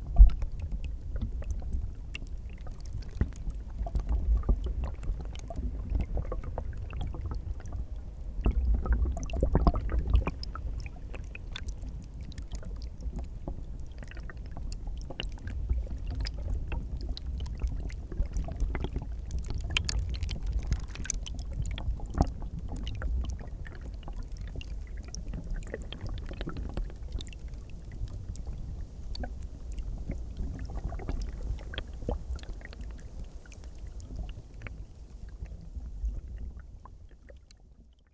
Utena, Lithuania, ice study
Tiny ice on a small river. Multichannel recording: omni, geophone, contact mics.